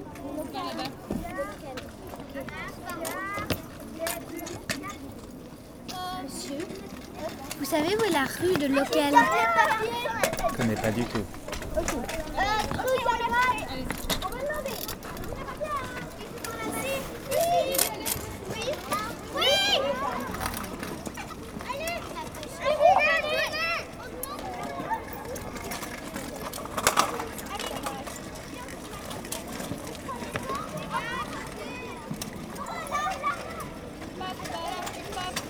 On the principal square of this town, sunday afternoon, young scouts are playing.